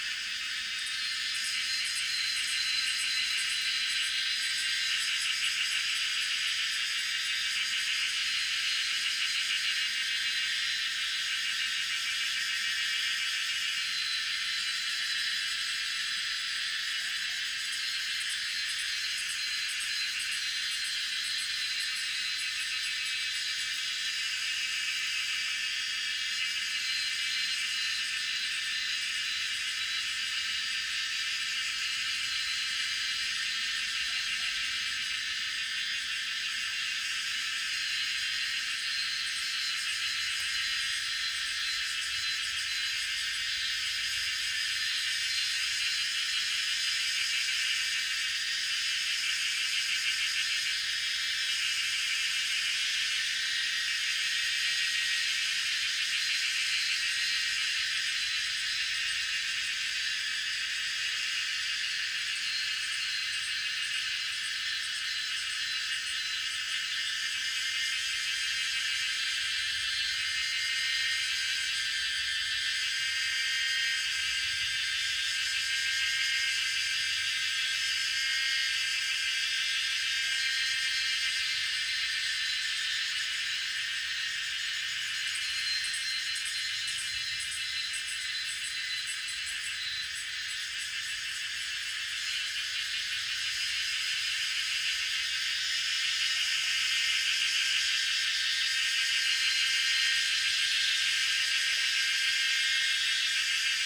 In the woods, Cicadas sound
Zoom H2n MS+XY